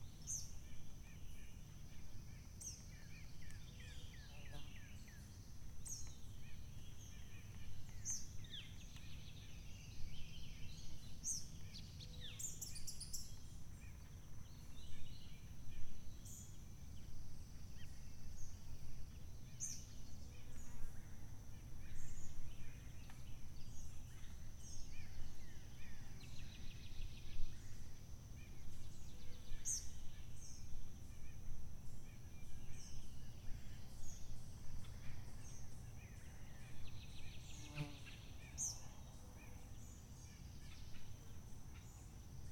Sounds heard on a 15 minute walk on Trail 3, early morning, Ouabache State Park, Bluffton, IN. Recorded at an Arts in the Parks Soundscape workshop at Ouabache State Park, Bluffton, IN. Sponsored by the Indiana Arts Commission and the Indiana Department of Natural Resources.
Indiana, USA